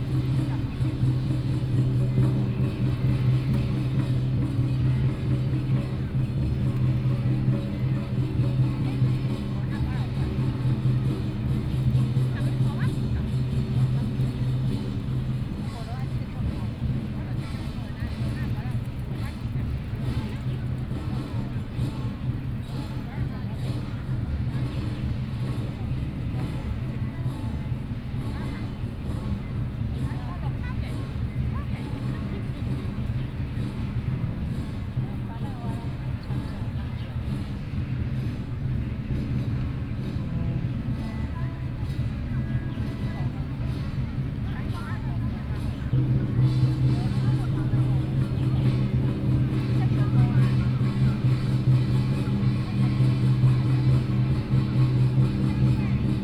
Qixing Park, Taipei - In the Park
Holiday in the park community festivals, Binaural recordings, Sony PCM D50 + Soundman OKM II